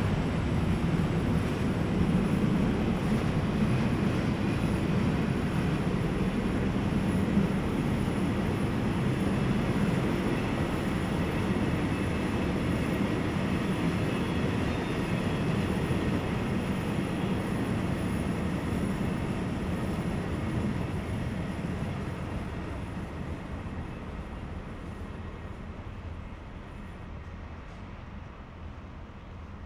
Bhf Süd, Köln - friday night, various traffic
Bahnhof Süd Köln at night, small train station, various traffic: trains, trams, cars and pedestrians
(tech: sony pcm d50, audio technica AT8022)